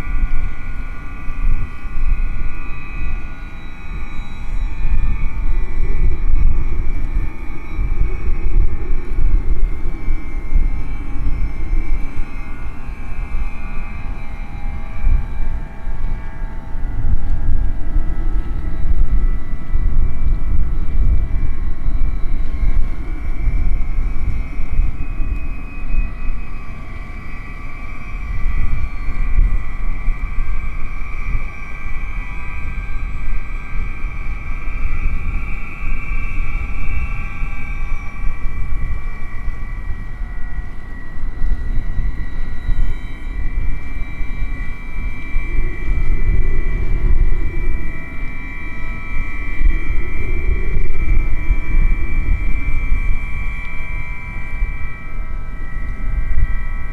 Townparks, Co. Leitrim, Ireland - The Sunken Hum Broadcast 357 - Aeolian Wind Harps In Carrick On Shannon Part 3 - 23 December 2013
One of my favorite sounds, one more time.....the wind harps of Carrick on Shannon.